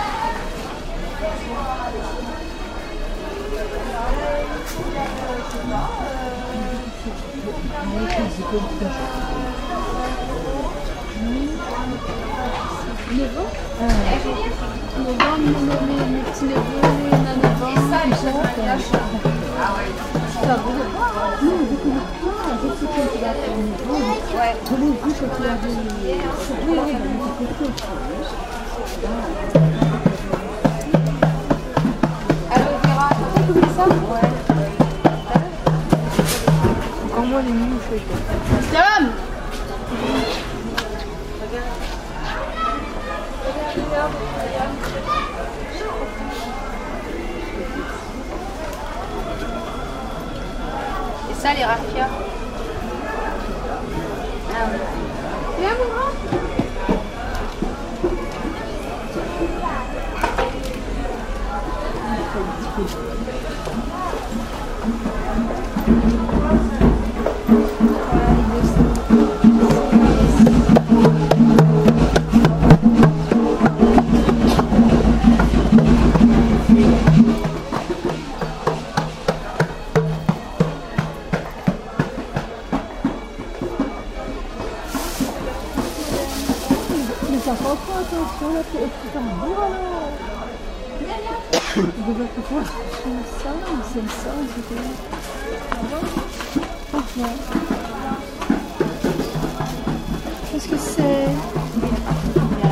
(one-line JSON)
{"date": "2010-08-01 11:21:00", "description": "ballade dans le marche couvert de saint pierre de la reunion", "latitude": "-21.34", "longitude": "55.47", "altitude": "13", "timezone": "Indian/Reunion"}